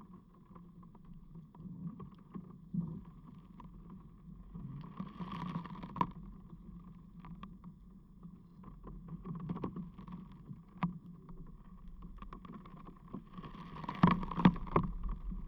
Berlin, Plänterwald, Spree - crackling ice (contact mics)
partly frozen river Spree, crackling ice moved by wind and water
(Sony PCM D50, DIY contact mics)